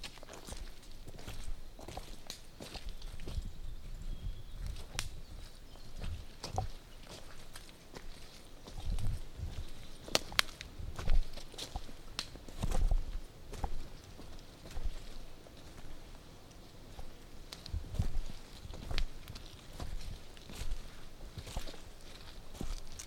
Copse by Portesham, Dorset, UK - Muddy walk